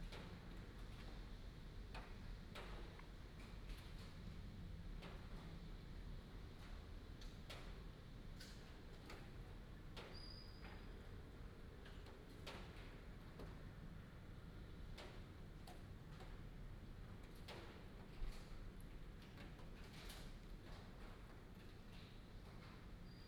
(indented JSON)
{
  "title": "大仁街, Tamsui District - Early morning",
  "date": "2016-03-13 04:45:00",
  "description": "Early morning, Raindrops sound",
  "latitude": "25.18",
  "longitude": "121.44",
  "altitude": "45",
  "timezone": "Asia/Taipei"
}